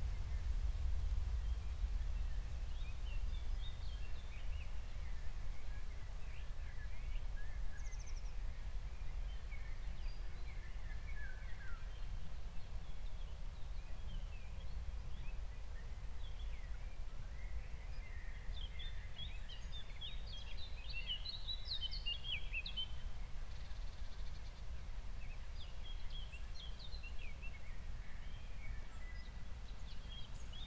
Berlin, Buch, Mittelbruch / Torfstich - wetland, nature reserve
20:00 Berlin, Buch, Mittelbruch / Torfstich 1
Deutschland, 18 June 2020, 20:00